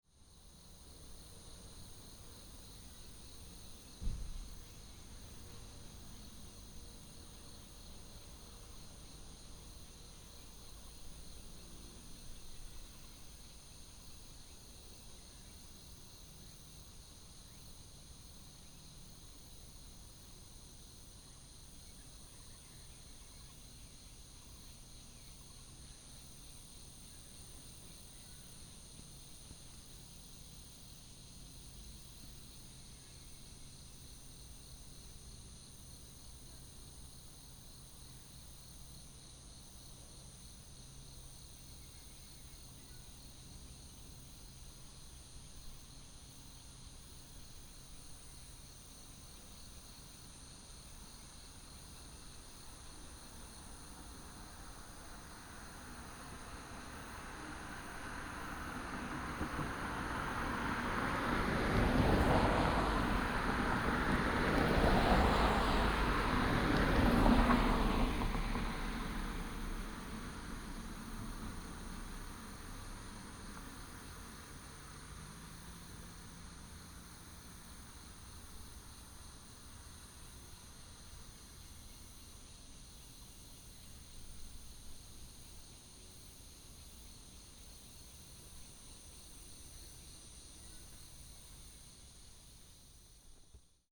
三灣南庄道路2號, Miaoli County - Insects
In the parking lot, Insects, Binaural recordings, Sony PCM D100+ Soundman OKM II